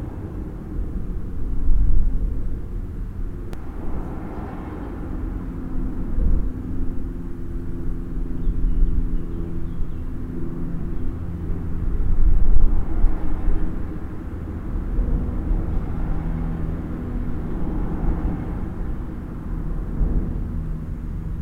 Rte d'Aix-les-Bains, Seyssel, France - Sous le pont
Au bord de la Via Rhôna sous le pont suspendu de Seyssel qui enjambe le Rhône, les bruits rythmés du passage des véhicules, quelques sons de la nature . Zoom H4npro posé verticalement les bruits du vent sur la bonnette reste dans des proportions acceptables et manifestent sa présence.